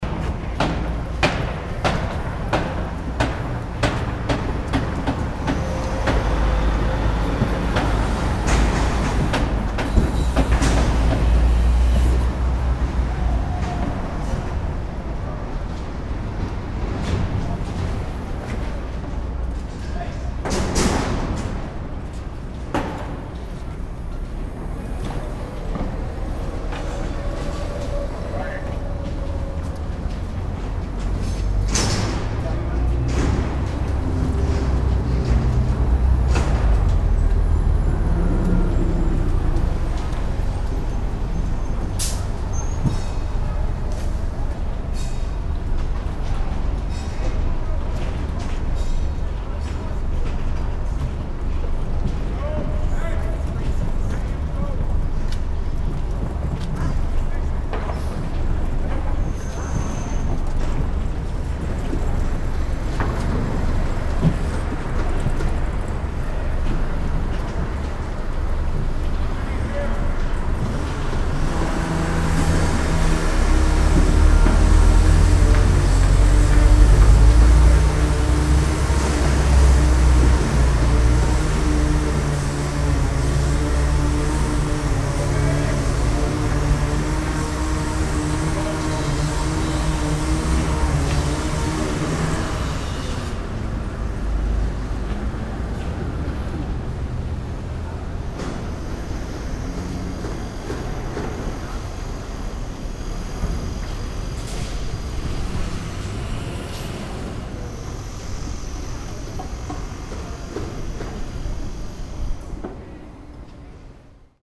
vancouver, seymour st, house construction
house construction downtown at a busy street, workers talking via walkie talkie
soundmap international
social ambiences/ listen to the people - in & outdoor nearfield recordings